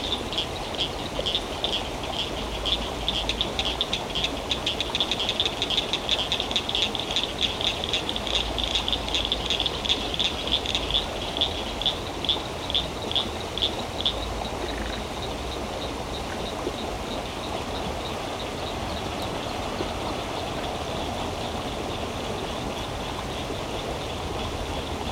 evening frogs at brushy creek, Round Rock TX